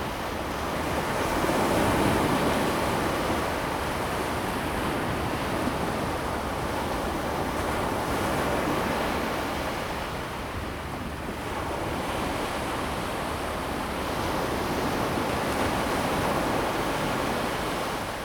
Tamsui District, New Taipei City - Sound of the waves
On the beach, Sound of the waves
Zoom H2n MS+XY
Tamsui District, New Taipei City, Taiwan, 5 January 2017